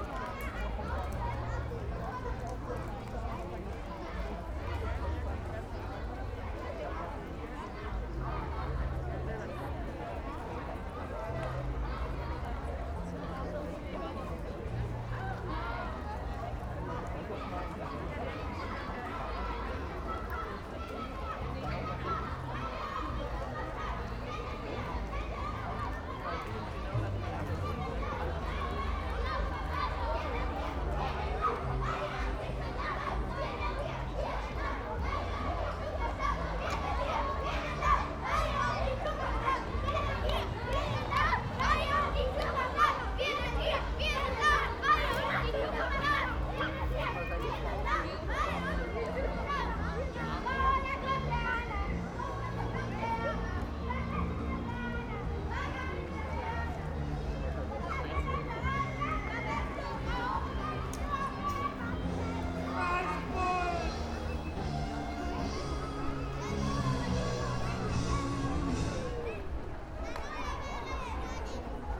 Haus der Kulturen der Welt, Berlin - Fridays for Future demo passing-by
Fridays for Future demonstration is pasing-by, in a rather quiet passage at Haus der Kulturen der Welt.
(Sony PCM D50)
Deutschland, 20 September